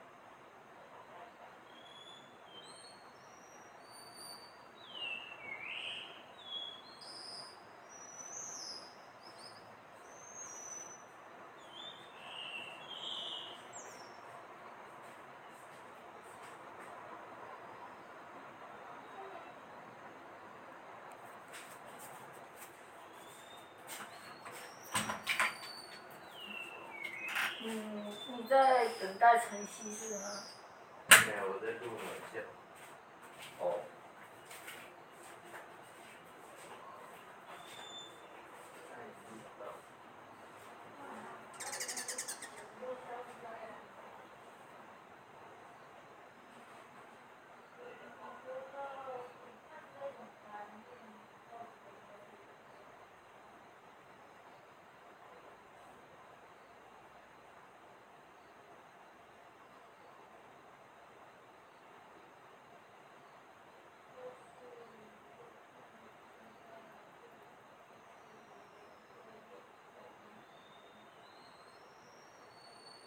224台灣新北市瑞芳區大埔路錢龍新城 - Taiwan Whistling Thrush
Place:
Ruifang, a place surrounded by natural environment.
Recording:
Taiwan Whistling Thrush's sound mainly.
Situation:
Early at morning, before sunrise.
Techniques:
Realme narzo 50A